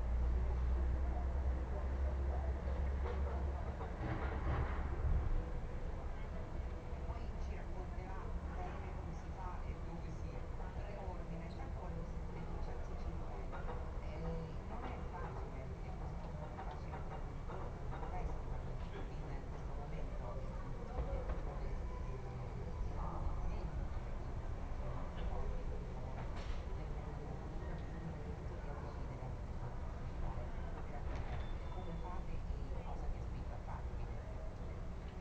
"Five ambiances in the time of COVID19" Soundscape
Chapter XXXVII of Ascolto il tuo cuore, città. I listen to your heart, city
Wednesday April 8 2020. Fixed position on an internal terrace at San Salvario district Turin, twenty nine days after emergency disposition due to the epidemic of COVID19.
Five recording realized at 8:00 a.m., 11:00 a.m., 2:00 p.m., 5:00 p.m. and 8:00 p.m. each one of 4’33”, in the frame of the project Les ambiances des espaces publics en temps de Coronavirus et de confinement, CRESSON-Grenoble research activity.
The five audio samplings are assembled here in a single audio file in chronological sequence, separated by 7'' of silence. Total duration: 23’13”